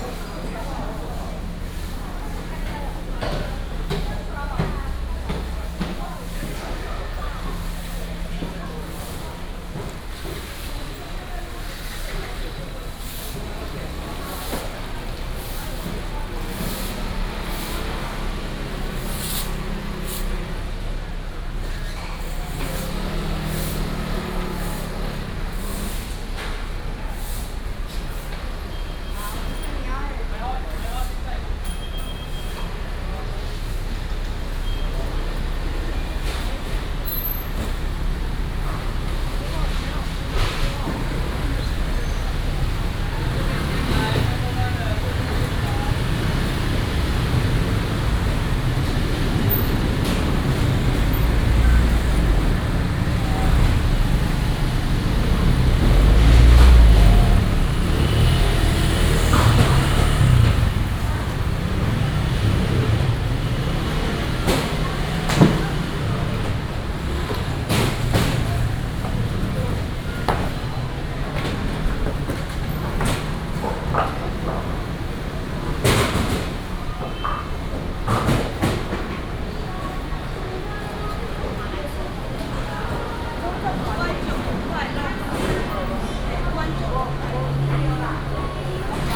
花蓮市果菜市場, 吉安鄉 Hualien County - Vegetable and fruit wholesale market
Vegetable and fruit wholesale market, traffic sounds
Binaural recordings
Ji’an Township, Hualien County, Taiwan